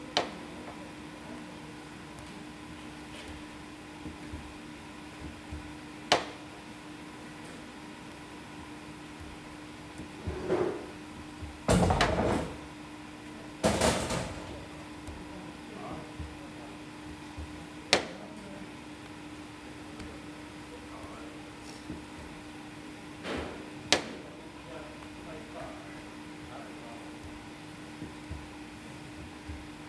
Young Rui Zhen 舊永瑞珍 - Kneading the dough
Baker kneading the dough. 師傅包揉麵團